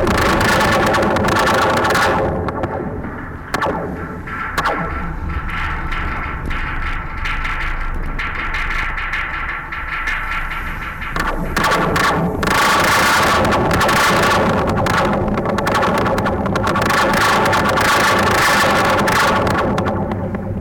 Golden Gate Bridge, San Francisco, Cable Tension
CA, USA